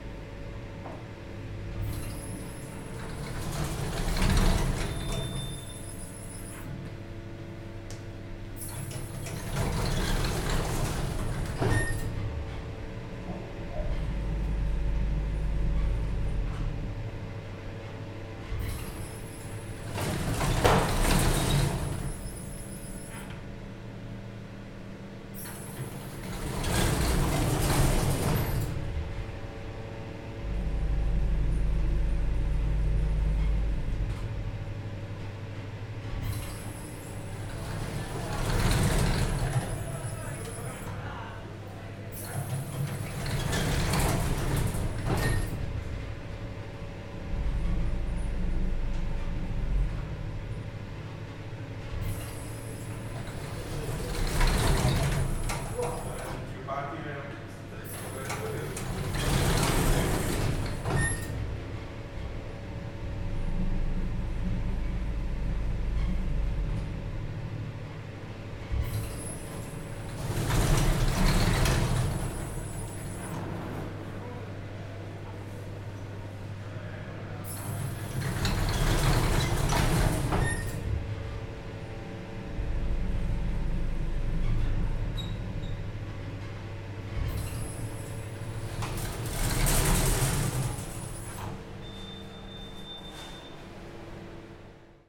Martin Buber St, Jerusalem - Elevator at Bezalel Academy of Arts and Design
An elevator at Bezalel Academy of Arts and Design.
Stops 3rd floor to 8th floor/
25 March 2019, 15:45